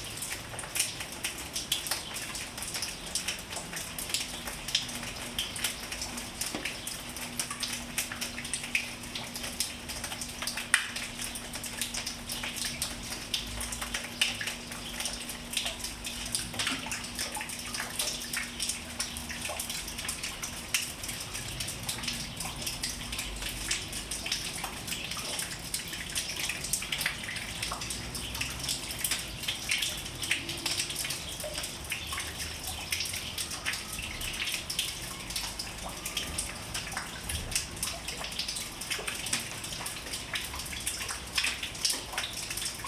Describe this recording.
"The First Snow Flakes 2021", It was a kind of Wet-Snow falling outside in front of the main entrance. I recorded everything in Ambisonics format, which in post I converted in 3D Binaural Sound. Distant ambulance car passing by and birds on the parking place are heard as well.